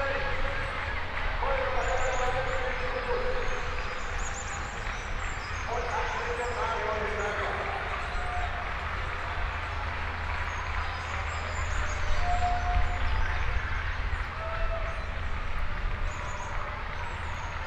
Eversten Holz, Oldenburg - Brunnenlauf, kids marathon
starting...
(Sony PCM D50, Primo EM172)
Oldenburg, Germany, 5 June 2016